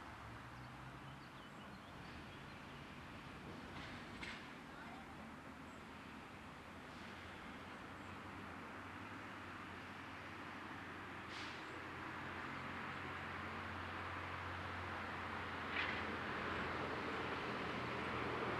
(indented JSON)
{
  "title": "L'Aquila, Collemaggio - 2017-05-29 01-Collemaggio",
  "date": "2017-05-29 12:42:00",
  "latitude": "42.34",
  "longitude": "13.40",
  "altitude": "688",
  "timezone": "Europe/Rome"
}